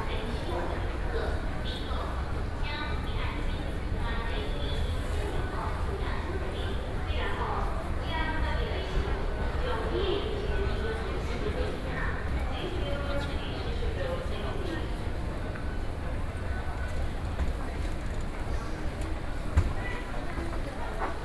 soundwalk in the Zhongxiao Fuxing Station, Sony PCM D50 + Soundman OKM II
9 July 2013, 16:00